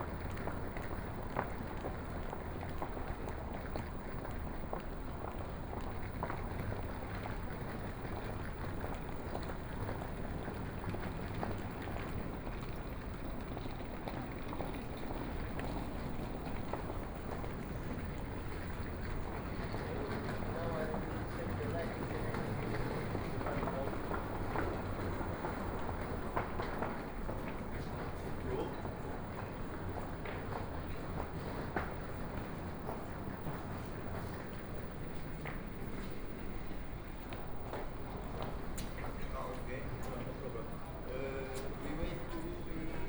München-Flughafen, Germany - Walking in the airport
Walking in the airport